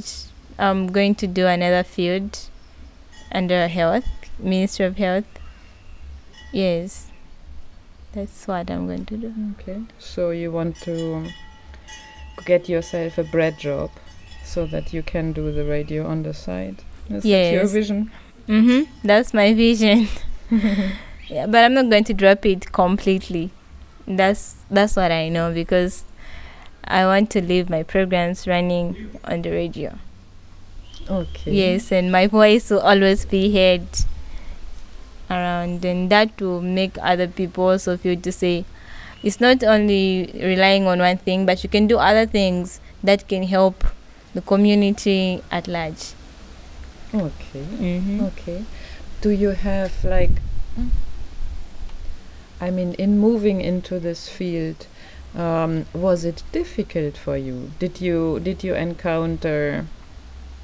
Sinazongwe Primary School, Sinazongwe, Zambia - Im Patience Kabuku at Zongwe FM...
We are sitting together with Patience Kabuku in the shade of one of the doorways to a classroom at Sinazonwe Primary School. The door to Zongwe FM studio is just across the yard from us. It’s Saturday afternoon; you’ll hear the singing from church congregations somewhere nearby. A match at the football pitch is due; occasionally, a motorcycle-taxi crosses the school grounds and interrupts our conversation for a moment. Patience is one of the youth volunteers at Zongwe FM community radio. After completing her secondary schooling in 2014, she started joining the activities at Zongwe, she tells us....
The recording forms part of THE WOMEN SING AT BOTH SIDES OF THE ZAMBEZI, an audio archive of life-story-telling by African women.